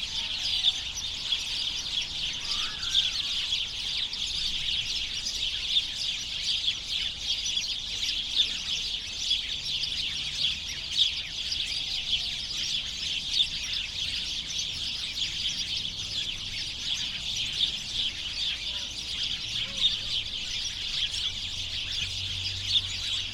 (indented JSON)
{
  "title": "Tempelhofer Feld, Berlin, Deutschland - chatty sparrows in a bush",
  "date": "2015-02-01 14:55:00",
  "description": "these sparrows could be heard from quite afar, it must have been hundreds of them.\n(SD702, AT BP4025)",
  "latitude": "52.48",
  "longitude": "13.42",
  "altitude": "53",
  "timezone": "Europe/Berlin"
}